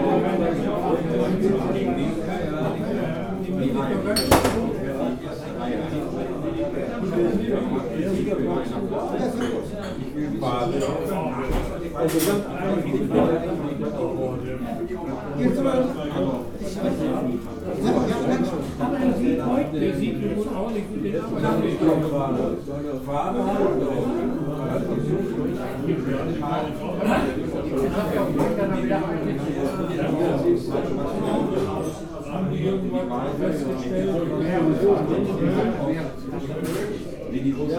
{"title": "Oberhausen, Deutschland - klumpen moritz", "date": "2014-07-22 20:00:00", "description": "gaststätte klumpen moritz", "latitude": "51.51", "longitude": "6.85", "altitude": "39", "timezone": "Europe/Berlin"}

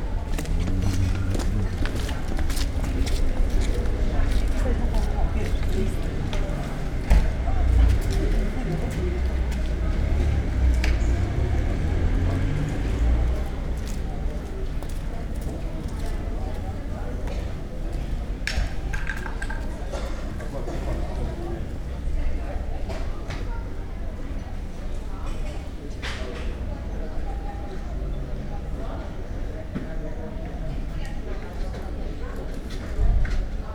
{"title": "Reszel, Poland, street ambience", "date": "2014-08-12 13:15:00", "description": "little street just before The Gothic St Peter's Church", "latitude": "54.05", "longitude": "21.15", "altitude": "119", "timezone": "Europe/Warsaw"}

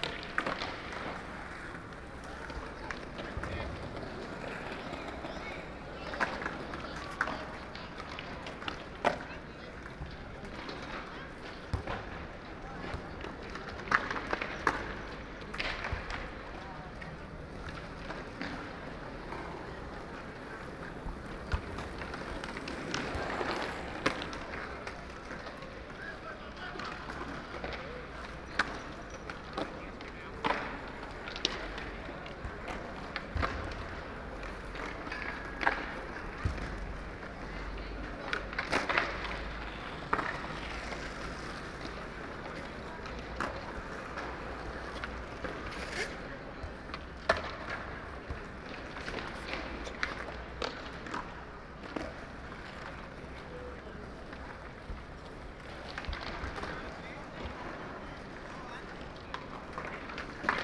{"title": "Macba, Barcelona, Spain - macba - plaza skaters", "date": "2010-02-11 10:10:00", "description": "Skaters on the plaza by Macba Museum, Binaural recording, DPA mics.", "latitude": "41.38", "longitude": "2.17", "altitude": "23", "timezone": "Europe/Madrid"}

